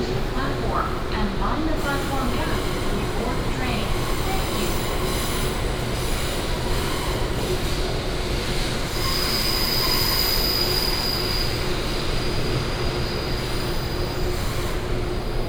Taipei, Taiwan - The train travels
Walking in the station platform, The train travels, Station Message Broadcast
台北市 (Taipei City), 中華民國